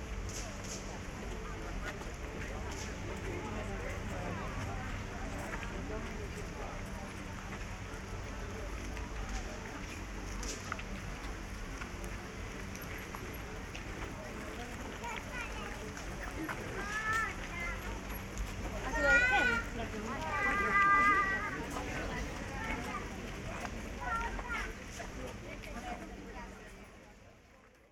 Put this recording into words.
Walk around fountains and further down the park. Recorded with DPA 4560 on Sound Devices MixPre6 II.